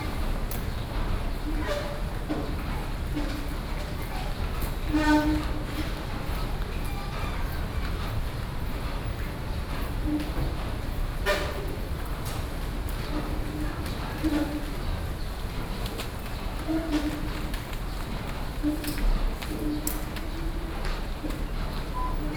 Shulin Station, New Taipei City - Escalators
Old escalator noise, Sony PCM D50 + Soundman OKM II
20 June 2012, 11:45